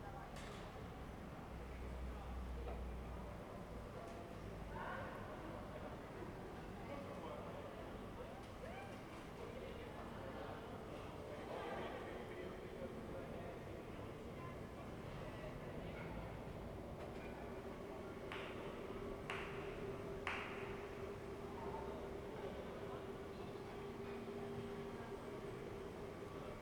"Terrace May 5th afternoon in the time of COVID19" Soundscape
Chapter LXVII of Ascolto il tuo cuore, città. I listen to your heart, city
Tuesday May5th 2020. Fixed position on an internal terrace at San Salvario district Turin, fifty six days (but second day of Phase 2) of emergency disposition due to the epidemic of COVID19
Start at 2:10 p.m. end at 2:57 p.m. duration of recording 47'17''
Piemonte, Italia, 5 May 2020, 14:10